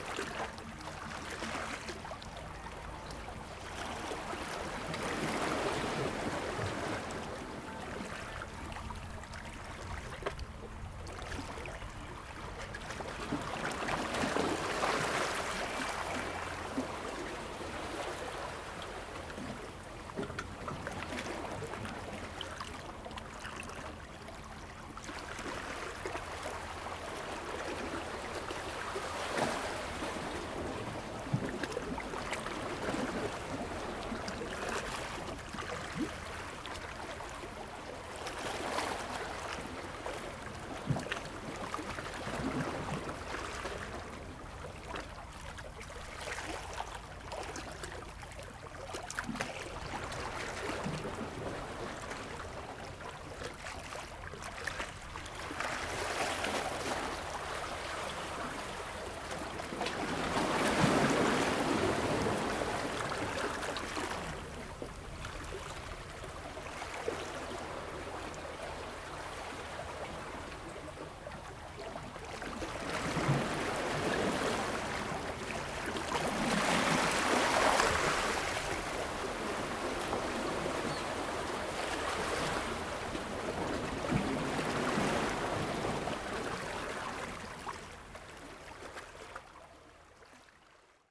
N Mole Dr, North Fremantle WA, Australia - Sunset Soundscape - from the waters edge
Fishermen to the south west. The water was lapping against the rocks with an incredible sunset. Not much wind (for Fremantle, for the North Mole!).